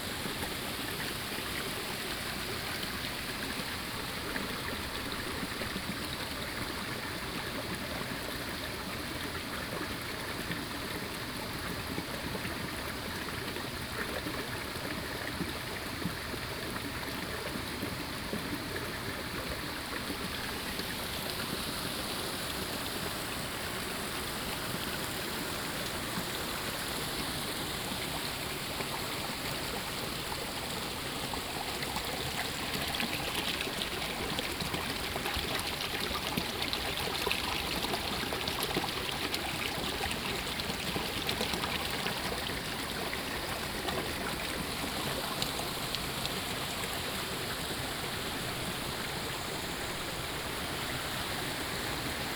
種瓜坑溪, 埔里鎮桃米里, Taiwan - Walk along the stream
Walk along the stream